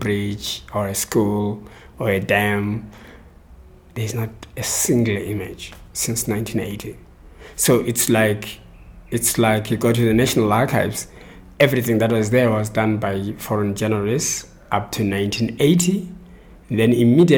{
  "title": "Amakhosi Cultural Centre, Makokoba, Bulawayo, Zimbabwe - History erased...",
  "date": "2012-10-29 13:55:00",
  "description": "We are sitting with Cont in his little office, stuffed with books, papers and all kinds of archival documents. Cont airs his frustration about an utter lack of archival documentation on Zimbabwe’s history since independence…\nCont Mhlanga is a playwright and the founding director of Amakhosi Cultural Centre in Bulawayo. In the interview Cont also describes how Amakhosi Cultural Centre is and has been responding to the challenges of this environment with educational projects and theatre for the people.",
  "latitude": "-20.14",
  "longitude": "28.58",
  "altitude": "1328",
  "timezone": "Africa/Harare"
}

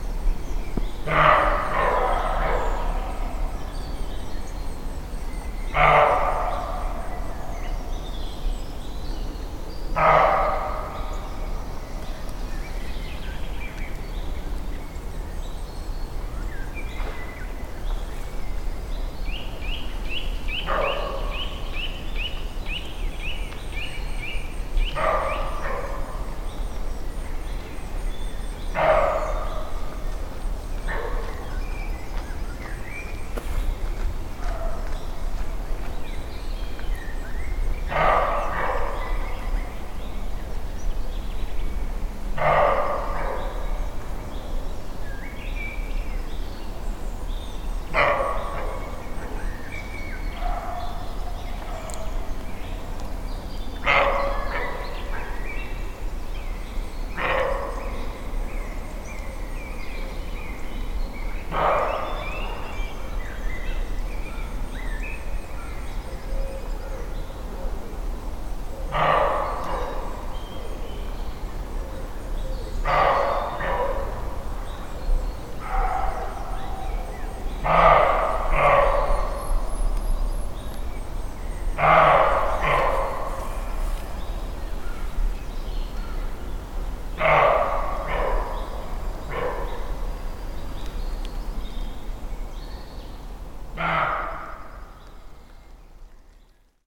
{
  "title": "Weinschnait, Neuffen, Deutschland - barking roe deer - bellendes Reh",
  "date": "2021-04-16 17:00:00",
  "description": "Ein aufgeschrecktes Reh gibt Schrecklaute von sich.\nSony PCM-D50; 120°; Level 6",
  "latitude": "48.57",
  "longitude": "9.35",
  "altitude": "418",
  "timezone": "Europe/Berlin"
}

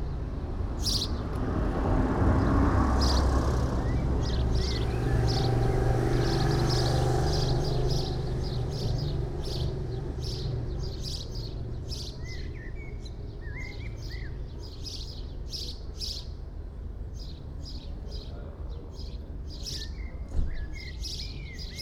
{
  "title": "Hambleden, Henley-on-Thames, UK - Hambledon Sparrows and Sunday goings on",
  "date": "2017-05-21 14:15:00",
  "latitude": "51.57",
  "longitude": "-0.87",
  "altitude": "49",
  "timezone": "Europe/London"
}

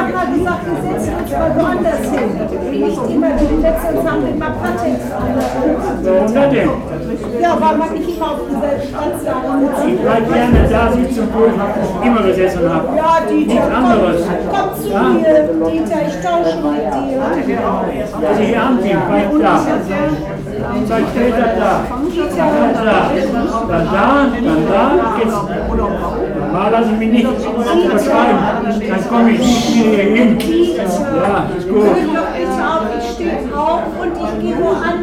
{
  "title": "Rüttenscheid, Essen, Deutschland - essen, friendly society for blinds, regular's table",
  "date": "2014-06-04 16:15:00",
  "description": "In der Blindenvereinigung Blindenhilfsverein Essen e.V. Der Klang der Stimmen und die Bewegung von Stühlen bei der Stammtischrunde.\nAt the friendly society for blinds. The sound of voices and the movements of chairs during the regular's table.\nProjekt - Stadtklang//: Hörorte - topographic field recordings and social ambiences",
  "latitude": "51.43",
  "longitude": "7.00",
  "altitude": "115",
  "timezone": "Europe/Berlin"
}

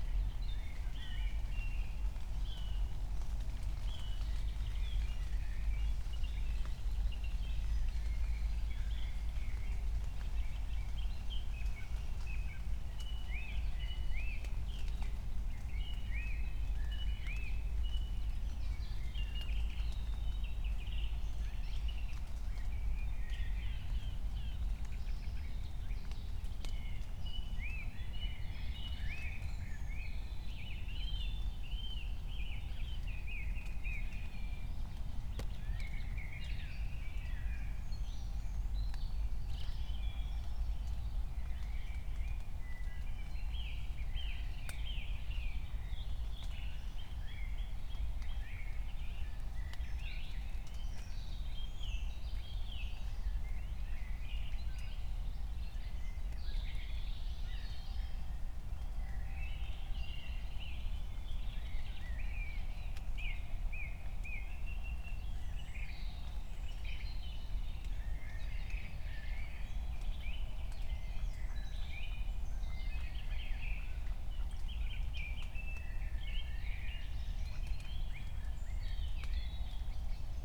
{
  "title": "Königsheide, Berlin - forest ambience at the pond",
  "date": "2020-05-23 04:00:00",
  "description": "4:00 a deep drone, raindrops, frogs, first birds",
  "latitude": "52.45",
  "longitude": "13.49",
  "altitude": "38",
  "timezone": "Europe/Berlin"
}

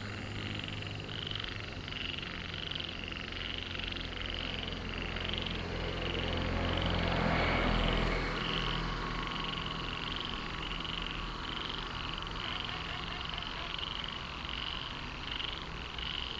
{
  "title": "TaoMi Bridge, 南投縣埔里鎮桃米里 - Frogs and Traffic Sound",
  "date": "2016-04-18 19:40:00",
  "description": "next to the bridge, Frogs chirping, Flow sound, Traffic Sound",
  "latitude": "23.94",
  "longitude": "120.93",
  "altitude": "466",
  "timezone": "Asia/Taipei"
}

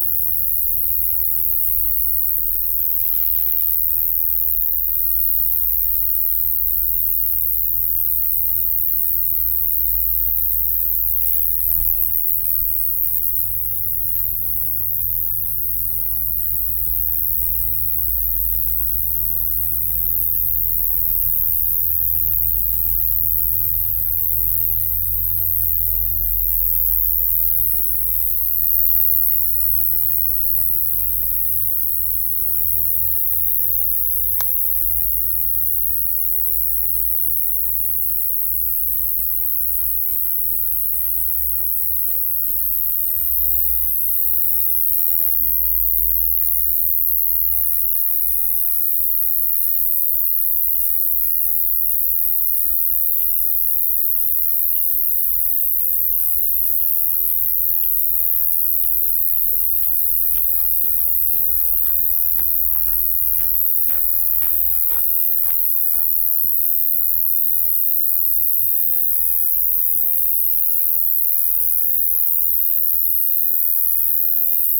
{
  "title": "at the edge of a city park, Maribor - night cricket",
  "date": "2015-07-28 22:00:00",
  "description": "on a night the day before this ”electrified\" cricket was accompanying rain near mournful willow tree, night after he moved his location from the meadow, that was meantime cut, to the bushy area close to the edge of a park",
  "latitude": "46.57",
  "longitude": "15.64",
  "altitude": "301",
  "timezone": "Europe/Ljubljana"
}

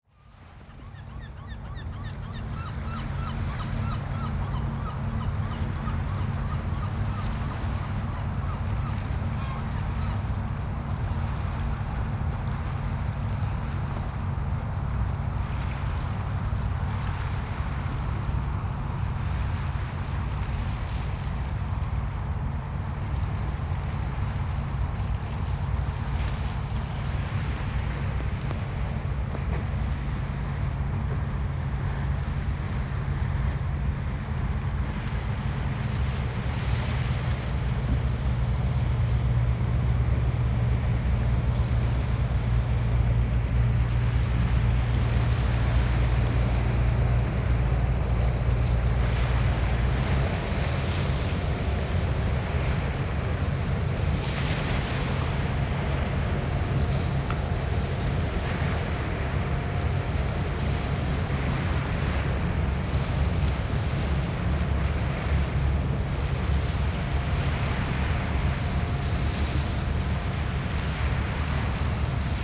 {
  "title": "Staten Island",
  "date": "2012-01-12 14:38:00",
  "description": "waves on stony shore. passing pilot boat",
  "latitude": "40.61",
  "longitude": "-74.06",
  "altitude": "3",
  "timezone": "America/New_York"
}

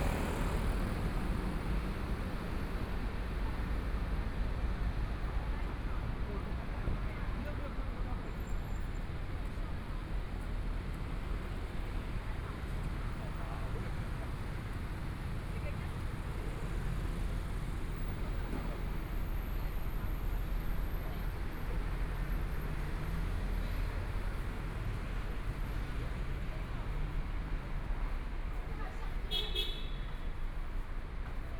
{
  "title": "Sec., Chang'an E. Rd., Taipei - walking",
  "date": "2014-02-08 14:35:00",
  "description": "walking on the Road, Traffic Sound, Motorcycle Sound, Pedestrians on the road, Binaural recordings, Zoom H4n+ Soundman OKM II",
  "latitude": "25.05",
  "longitude": "121.53",
  "timezone": "Asia/Taipei"
}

